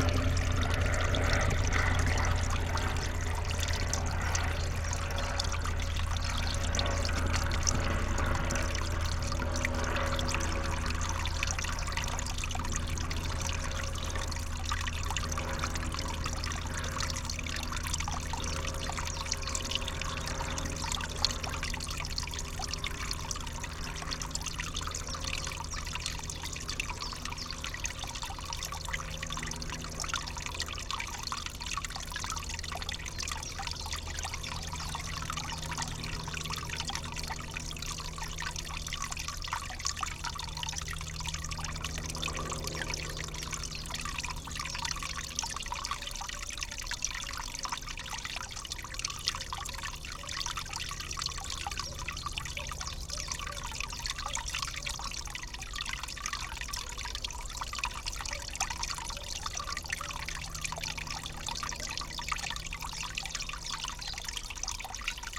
Utena, Lithuania, a plane over beavers dam
low flying plane interruptin my recordings at new built beavers dam